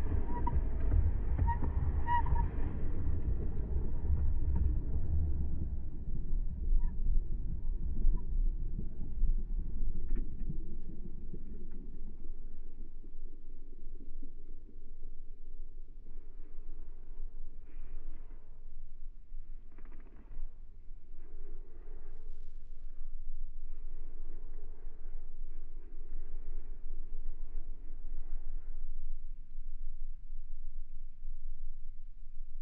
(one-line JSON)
{"title": "Lijnbaan, Den Haag - hydrophone rec from the bridge", "date": "2009-05-17 17:00:00", "description": "Mic/Recorder: Aquarian H2A / Fostex FR-2LE\ntrams rumbling - a tour boat passing by", "latitude": "52.07", "longitude": "4.30", "altitude": "4", "timezone": "Europe/Berlin"}